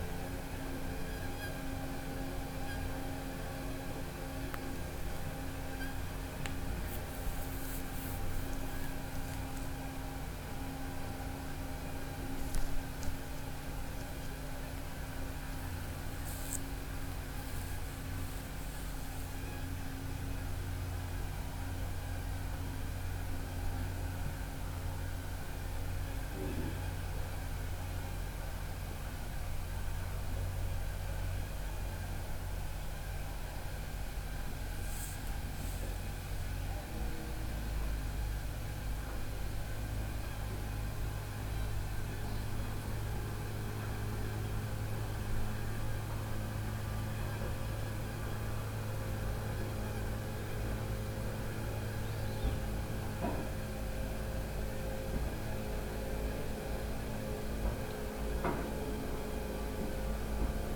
Lake View, Sinazongwe, Zambia - sounds in my room after dark...
...closed doors and windows are not something I consider appealing… even though the result may be - not only acoustically, a bit worrying…. first, you'll mainly hear the alarmingly high-pitch sounds of insects... then motor sounds from the rigs on the lake begin mixing in…
16 August 2016